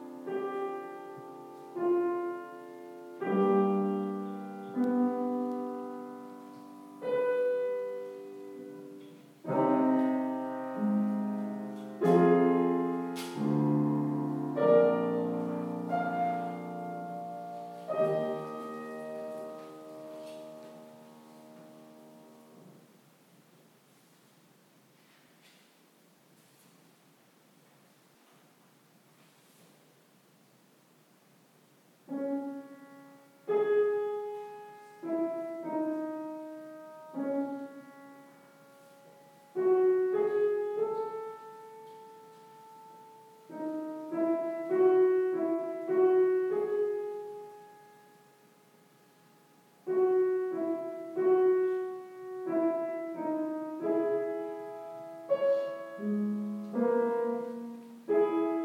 Nida, Lithuania - Lutheran Church Interior
Recordist: Anita Černá
Description: Interior of the brick Lutheran Church. Piano playing and people inside the church. Recorded with ZOOM H2N Handy Recorder.